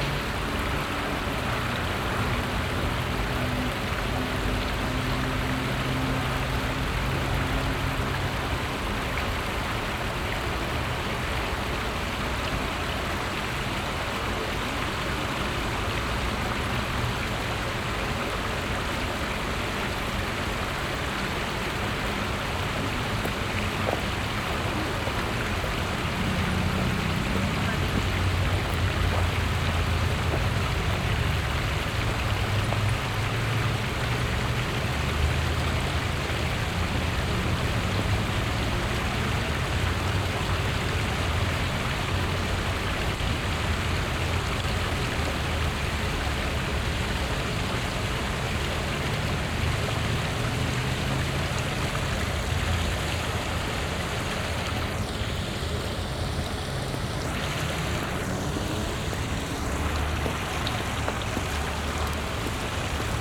Werden, Essen, Deutschland - essen, werden, brehm island, pedestrian bridge
Auf einer hölzernen Fussgängerbrücke zur Brehminsel in Essen Werden. Die Klänge der vorbeigehenden Spaziergänger, das Plätschern eines kleinen Wehrs unter der Brücke, ein Fahrrad und im Hintergrund die Motorengeräusche der Hauptverkehrsstraße, die hier viel von Motorradfahrern genutzt wird.
On a wooden pedestrian bridge. The sound of passing by strollers, water sounds from a smalll dam undernetah the bridge and a bicycle. In the background motor sounds from the street traffic.
Projekt - Stadtklang//: Hörorte - topographic field recordings and social ambiences